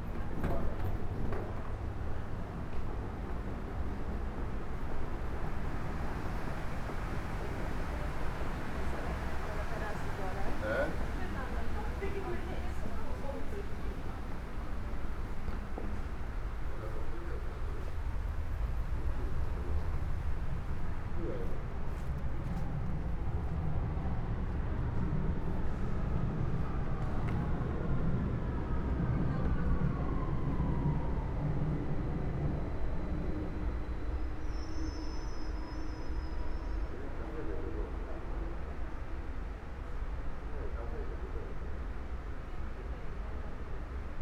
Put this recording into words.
A real-time journey on the London Underground from the East End at Bethnal Green to the main line Terminus at Paddington. Recorded with a Sound Devices Mix Pre 3 and 2 Beyer lavaliers.